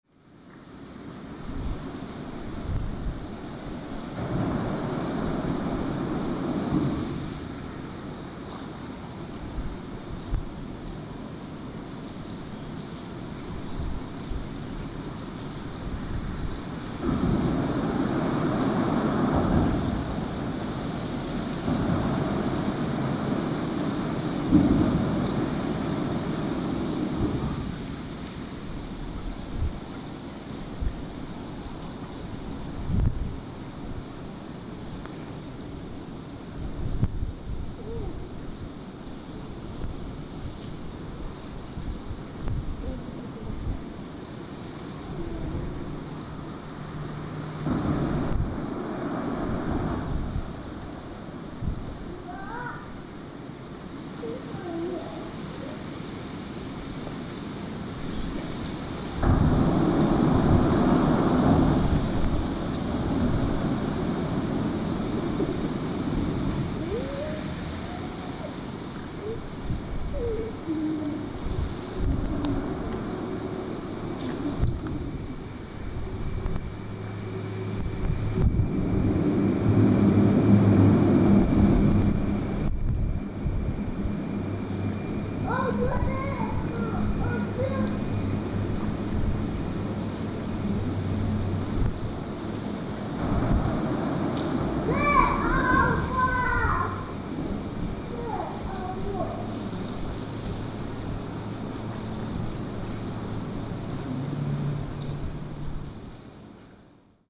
Kungsbron, Sollefteå, Under the bridge
Just under the Kungsbron bridge by the river Ångermanälven we could listen to this outdoor room created by the bridge, the rain and the cars passing along over the bridge splices. WLD